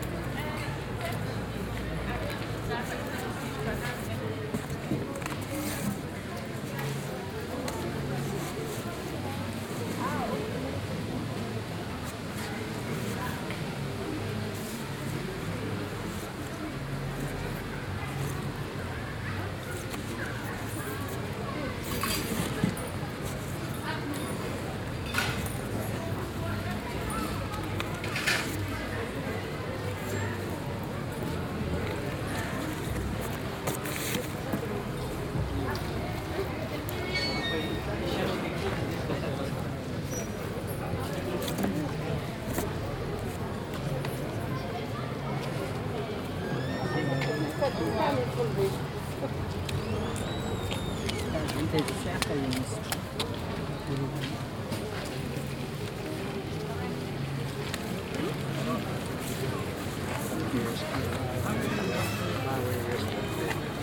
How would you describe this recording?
Ambiance brocante. Tech Note : SP-TFB-2 binaural microphones → Olympus LS5, listen with headphones.